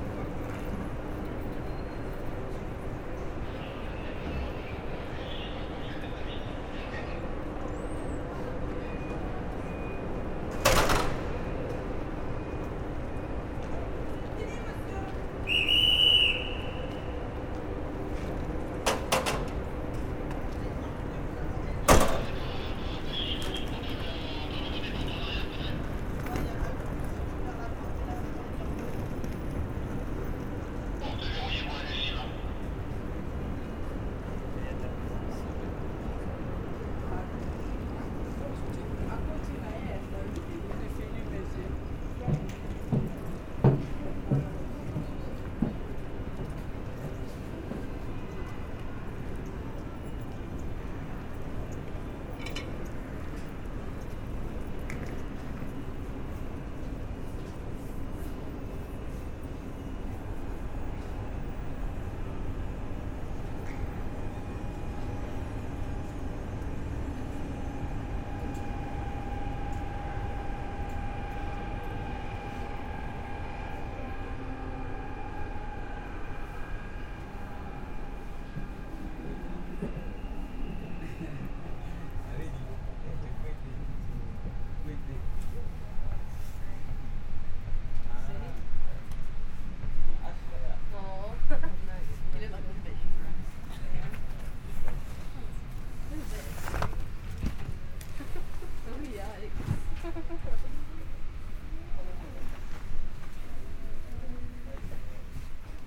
Gare de Lyon, Paris, France - Gare de Lyon station
Taking the train in the Paris station 'Gare de Lyon'.
27 December 2016, 17:50